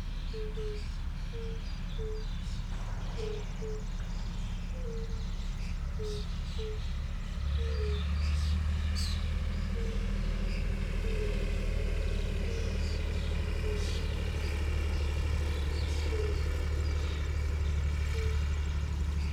ROD Bażant, Aleja Spacerowa, Siemianowice Śląskie - firebelly toads, shots
small pond, nature reserve, the gentle sound of fire-bellied toads (Bombina bombina), a River warbler, unavoidable shooting from nearby, surrounding traffic drone
(Sony PCM D50, DPA4060)